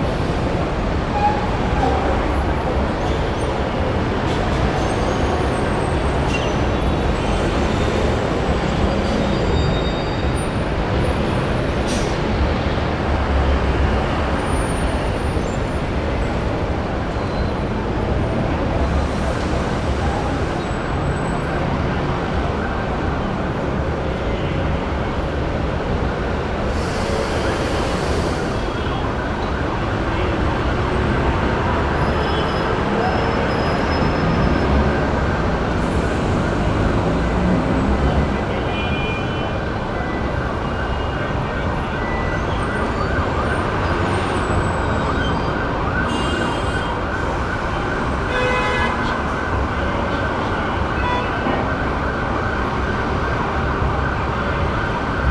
Bosque Izquierdo, Bogotá, Colombia - LA Calle 19 con 3 entre un edificio.
En el centro de Bogotà.
Distrito Capital de Bogotá, Colombia, 24 May 2013, ~06:00